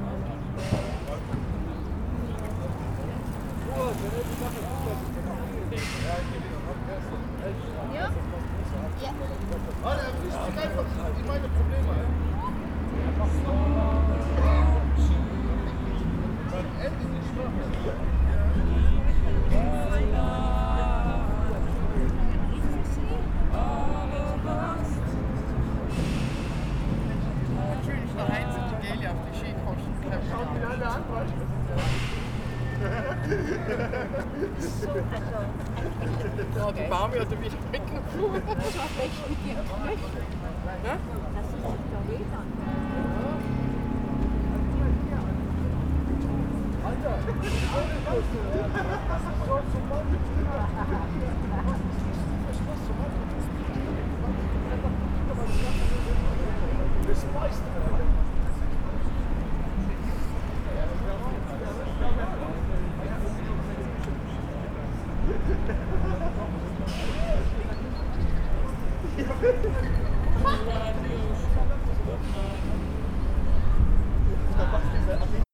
Innsbruck, vogelweide, Waltherpark, Österreich - Frühling im Waltherpark/vogelweide
walther, park, vogel, weide, leise musik, jogger, reden, lachen, husten, fahrradweg, fahrradketten, gitarre, singen, glocken, käfiggeräusch, fußball gegen gitter, waltherpark, vogelweide, fm vogel, bird lab mapping waltherpark realities experiment III, soundscapes, wiese, parkfeelin, tyrol, austria, anpruggen, st.